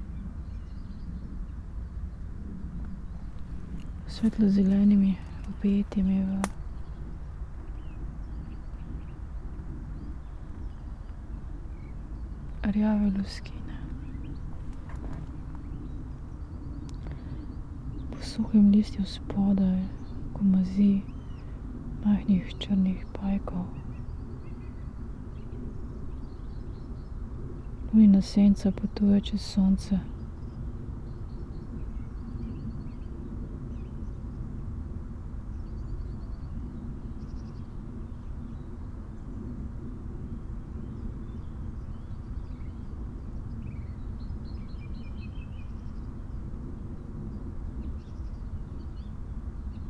tree crown poems, Piramida - sun eclipse, spring equinox, spoken words
Maribor, Slovenia, 20 March 2015